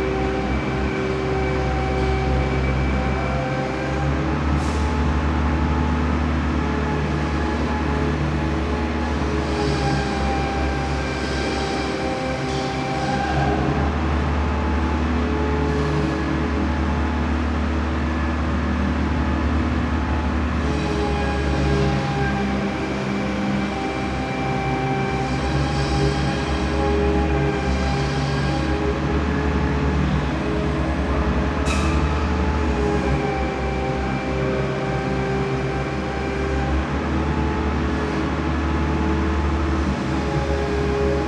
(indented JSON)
{
  "title": "Antoniusschacht, Zürich, Schweiz - Tunnelbau S-Bahn",
  "date": "1987-05-12 14:33:00",
  "latitude": "47.37",
  "longitude": "8.56",
  "altitude": "430",
  "timezone": "Europe/Zurich"
}